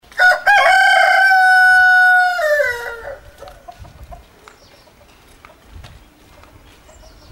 Yangshuo area, cock, recorded by VJ Rhaps